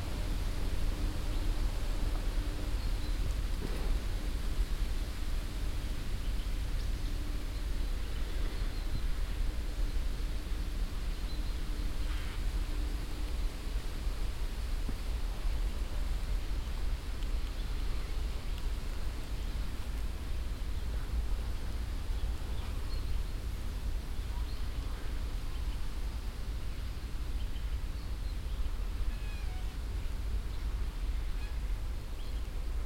roder, wind in the bushes
At a cow meadow. A group of trees and bushes moving in the fresh late summer evening wind coming from the nearby valley.. The silent, windy atmosphere with sounds of the leaves, different kind of birds and finally some crows that fly by.
Roder, Wind in den Büschen
Auf einer Kuhweide. Eine Gruppe von Bäumen und Büschen bewegen sich im frischen sommerlichen Spätabend, der aus dem nahen Tal kommt. Die stille windige Atmosphäre mit Geräuschen von Blättern, verschiedenen Arten von Vögeln und schließlich einige Krähen die vorbeifliegen.
Roder, vent dans les arbres
Sur une prairie à vaches. Un groupe d’arbres et de buissons bougent dans le vent frais d’un soir d’été venant de la vallée proche en fin de saison. L’ambiance silencieuse et venteuse avec le bruit des feuilles, différents oiseaux et, à la fin, des corbeaux qui passent.
Luxembourg